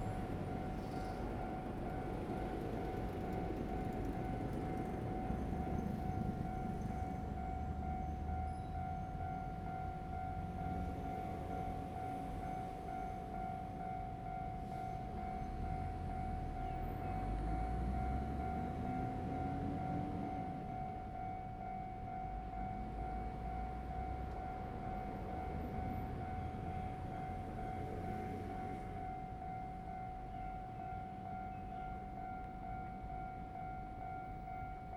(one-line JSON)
{"title": "中正二路, Yingge Dist., New Taipei City - the train runs through", "date": "2017-08-25 12:53:00", "description": "in the railway, traffic sound, The train runs through\nZoom H2n MS+XY", "latitude": "24.95", "longitude": "121.34", "altitude": "60", "timezone": "Asia/Taipei"}